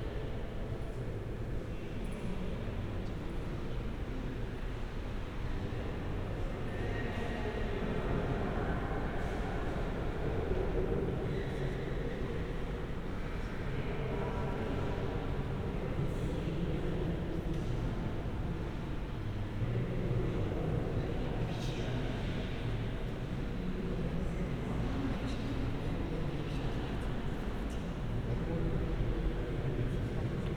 mainz: dom - the city, the country & me: mainz cathedral
inside the cathedral (with six seconds reverberation), voices and steps
the city, the country & me: september 26, 2013
Mainz, Germany